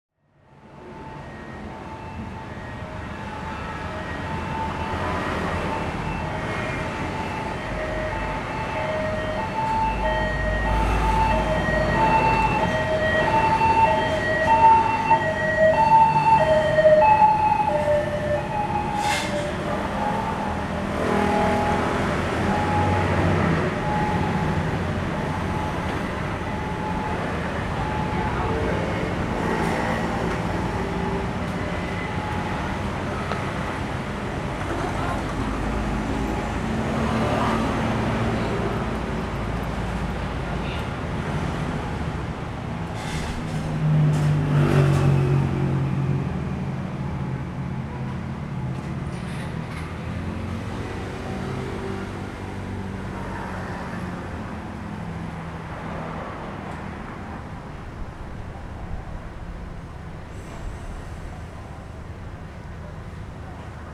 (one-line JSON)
{"title": "Sanmin District, Kaohsiung - In the street", "date": "2012-02-25 15:00:00", "description": "Traffic Noise, Restaurants are finishing cleaning, Sony ECM-MS907, Sony Hi-MD MZ-RH1", "latitude": "22.64", "longitude": "120.30", "altitude": "10", "timezone": "Asia/Taipei"}